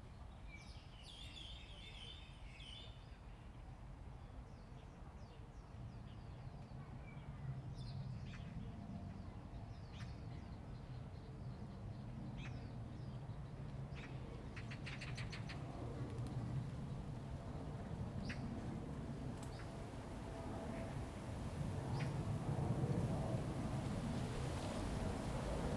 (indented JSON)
{
  "title": "榕園, Jinhu Township - in the Park",
  "date": "2014-11-04 17:00:00",
  "description": "Birds singing, Wind, In the woods, Aircraft flying through\nZoom H2n MS+XY",
  "latitude": "24.44",
  "longitude": "118.43",
  "altitude": "28",
  "timezone": "Asia/Taipei"
}